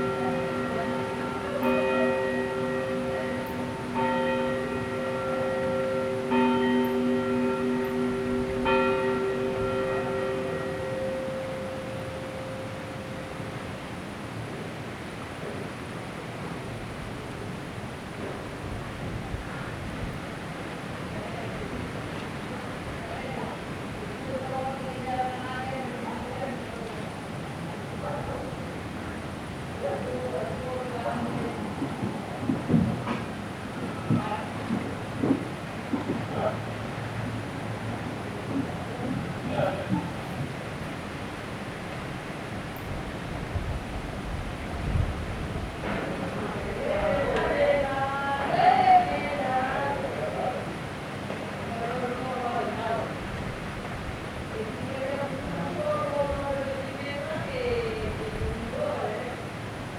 Ambiente nocturno en el pueblo a través de la ventana de Cal Xico. Los vecinos charlan animadamente, un gato próximo maulla. Se sienten ladridos y coches en la distancia mientras el viento agita las hojas de los árboles en la calle. El reloj de la iglesia marca la medianoche.
St Bartomeu del Grau, Spain, 16 July